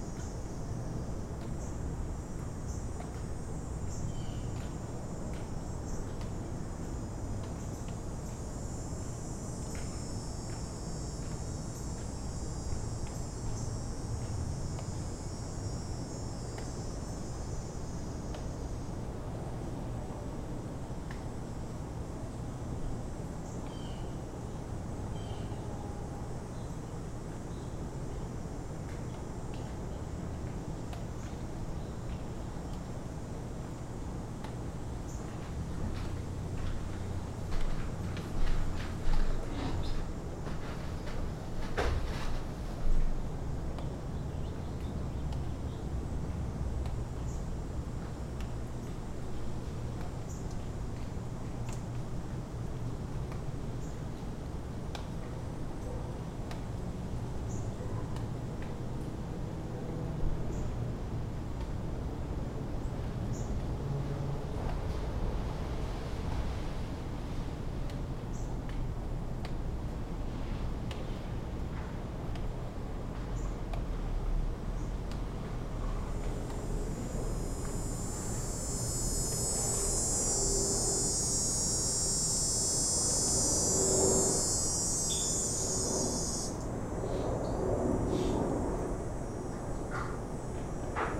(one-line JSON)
{"title": "Lyndale, Minneapolis, MN, USA - cicada arriving flights minneapolis", "date": "2019-09-02 10:18:00", "description": "cicada arriving flights summer Minneapolis 20190902", "latitude": "44.94", "longitude": "-93.29", "altitude": "266", "timezone": "America/Chicago"}